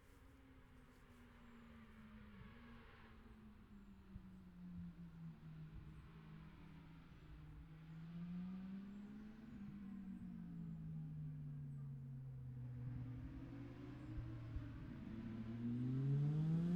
Scarborough, UK - motorcycle road racing 2017 ... 600 ...
600cc practice ... odd numbers ... Bob Smith Spring Cup ... Olivers Mount ... Scarborough ... open lavalier mics clipped to sandwich box ...